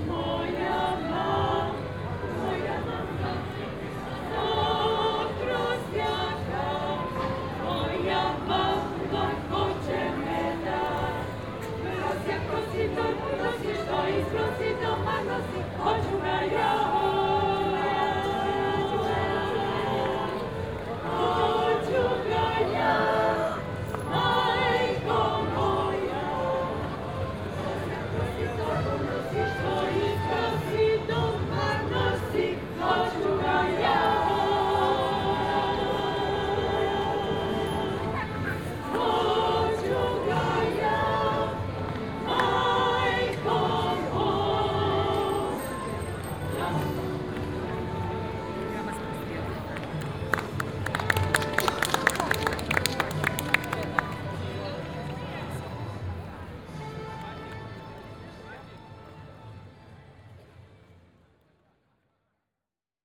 Cathedrals square, Kotor, Montenegro - (225 BI) Choir on Cathedrals square
Binaural recording of a street music melt: choir and some other band on the other square in the background.
Recorded with Soundman OKM on Sony PCM D100
Opština Kotor, Crna Gora / Црна Гора, 17 July 2017, 15:14